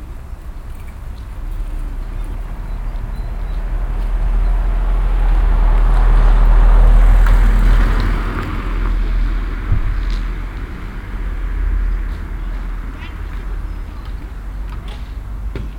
morgens am schulhof, spielende kinder, ein fahrzeug
soundmap nrw: social ambiences/ listen to the people - in & outdoor nearfield recordings